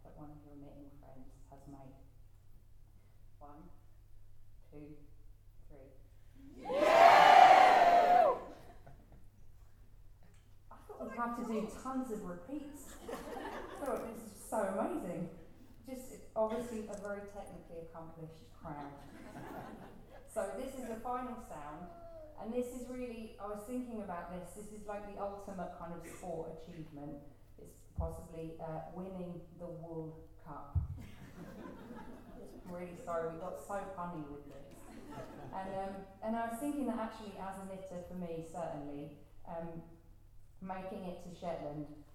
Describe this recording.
For Shetland Wool Week this year, I decided that I needed to launch a new sound piece. The piece is called Knitting Pundits, and involves myself and my wondrous comrade Louise Scollay (AKA Knit British) commentating on the technical skillz of knitters in the manner of football pundits. We wrote out a script which included a lot of word play around foot-work (football) and sock construction (knitting) and also involving superb puns involving substitutions (as in when you run out of yarn) and so on. We read out our entertaining script and then explained that to really bring the concept alive, we needed some sounds from the audience - the sense of an engaged stadium of knitters, following the play with rapt attention. To collect their responses and to create this soundscape, I read out scenarios in knitting which I then correlated to football. An offside situation; a knitting foul; a goal; winning the match; and making it to the wool cup.